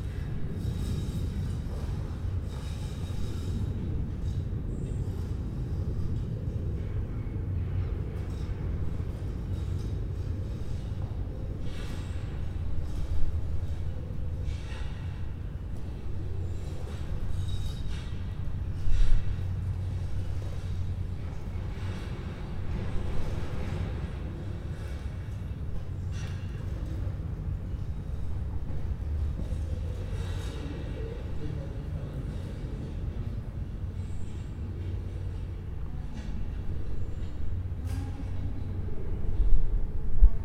Le Chaudron, Colombelles, France - Le Chaudron
Reverb of "Le Chaudron", an old cooling tower, last trace of the SMN.
The Société Métallurgique de Normandie (Metallurgic Company of Normandy), or SMN was a steel mill in Caen (Colombelles), Normandy. It opened in 1912 and closed in 1993
Zoom H4 in the center of the tower, people inside scraping the ground, some wind, birds and bulldozer outside.